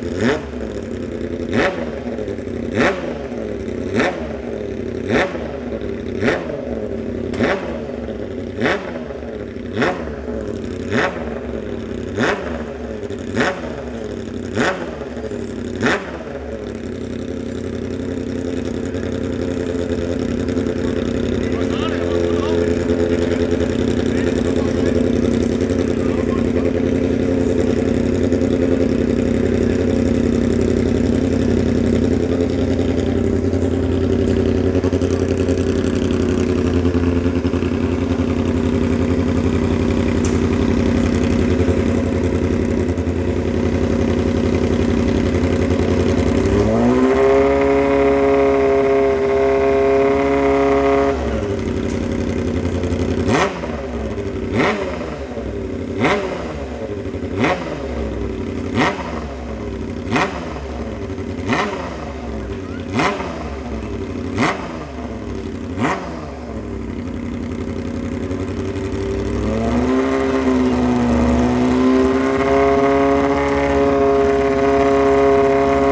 day of champions ... silverstone ... pit lane walkabout ... rode lavalier mics clipped to hat to ls 11 ...